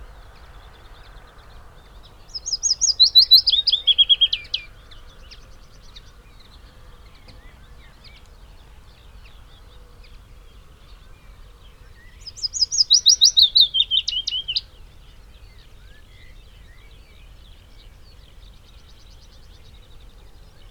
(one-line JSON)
{"title": "Green Ln, Malton, UK - willow warbler song soundscape ...", "date": "2020-05-09 05:10:00", "description": "willow warbler song soundscape ... Luhd PM-01 binaural mics in binaural dummy head on tripod to Olympus LS 14 ... bird calls ... song ... from ... red-legged partridge ... pheasant ... chaffinch ... wood pigeon ... skylark ... whitethroat ... linnet ... blue tit ... crow ... blackbird ... song thrush ... some background noise ...", "latitude": "54.12", "longitude": "-0.54", "altitude": "83", "timezone": "Europe/London"}